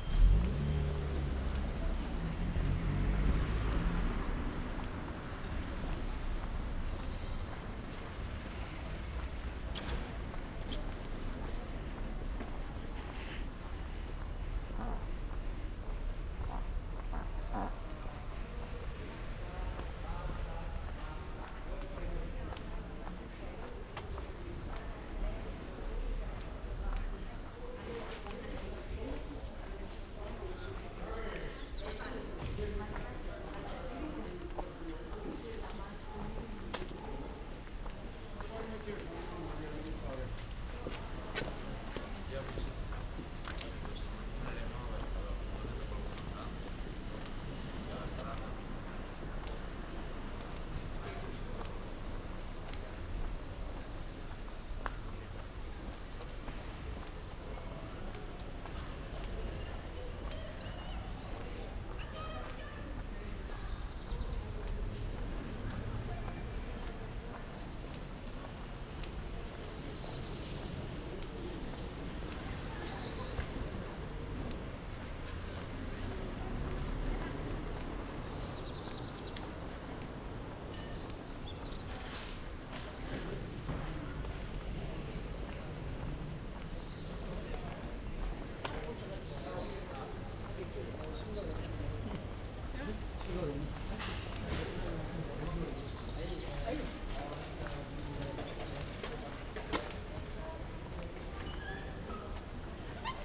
Ascolto il tuo cuore, città, I listen to your heart, city, Chapter CXXXII - Second far soundwalk and soundtraintrip with break in the time of COVID19: Soundwalk
"Second far soundwalk and soundtraintrip with break in the time of COVID19": Soundwalk
Chapter CXXXV of Ascolto il tuo cuore, città. I listen to your heart, city
Thursday, October 8th 2020, five months and twenty-seven days after the first soundwalk (March 10th) during the night of closure by the law of all the public places due to the epidemic of COVID19.
This path is part of a train round trip to Cuneo: I have recorded only the walk from my home to Porta Nuova rail station and the train line to Lingotto Station. This on both outward and return
Round trip where the two audio files are joined in a single file separated by a silence of 7 seconds.
first path: beginning at 6:55 a.m. end at 7:25 a.m., duration 29’35”
second path: beginning at 5:32 p.m. end al 5:57 p.m., duration 24’30”
Total duration of recording 00:54:13
As binaural recording is suggested headphones listening.
Both paths are associated with synchronized GPS track recorded in the (kmz, kml, gpx) files downloadable here: